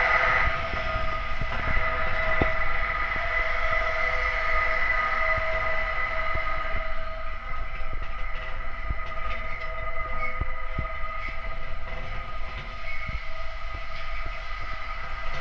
Tallinn, Baltijaam billboard pole - Tallinn, Baltijaam billboard pole (recorded w/ kessu karu)
hidden sound, resonance inside a metal support pole for an advertising billboard outisde Tallinns main train station